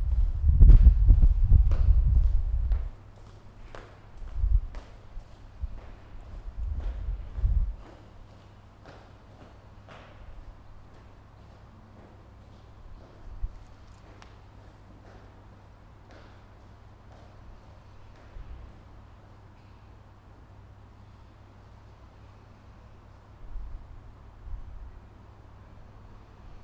Salisbury, UK - 040 In the cloister
9 February